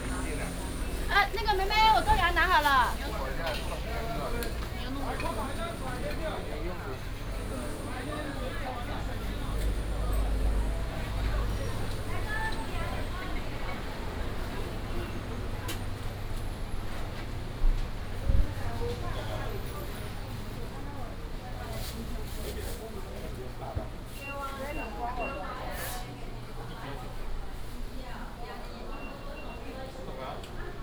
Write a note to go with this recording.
Small traditional market, vendors peddling